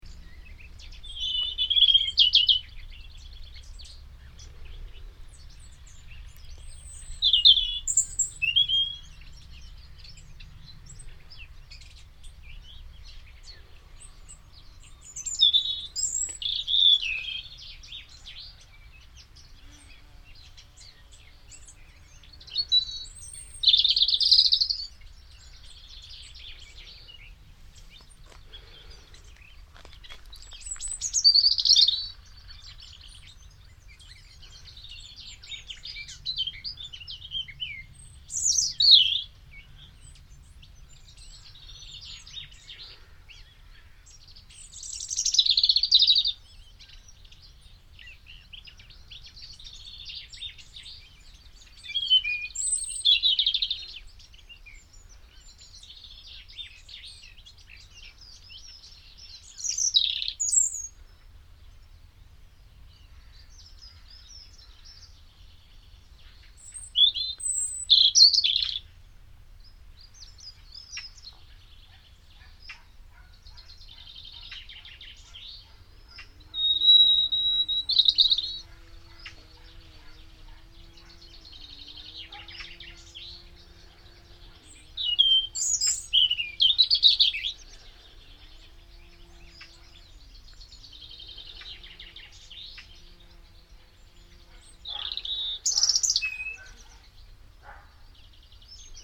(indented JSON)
{
  "title": "Sunday morning stroll",
  "date": "2011-04-10 13:52:00",
  "description": "Sunday morning, spring, birds, Barr Lane, Chickerell",
  "latitude": "50.63",
  "longitude": "-2.50",
  "altitude": "33",
  "timezone": "Europe/London"
}